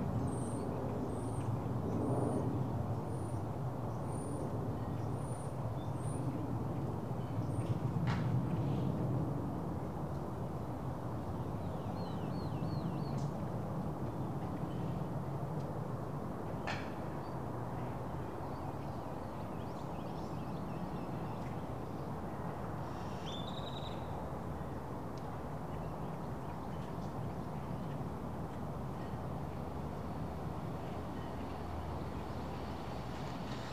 Milburn Ln, Austin, TX, USA - Mansell Bridge
Recording facing the east. A quiet afternoon that still has a lot of activity in the distance. Some birds, the nearby bridge, and some arriving aircraft.